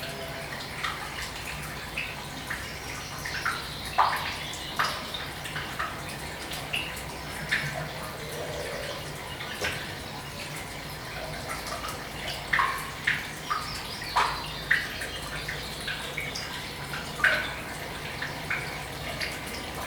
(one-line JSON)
{"title": "Scoska Cave, Littondale - Scoska Cave", "date": "2022-05-01 10:37:00", "description": "Just a short walk from Arncliffe, Littondale, there's Scoska Cave.", "latitude": "54.15", "longitude": "-2.13", "altitude": "321", "timezone": "Europe/London"}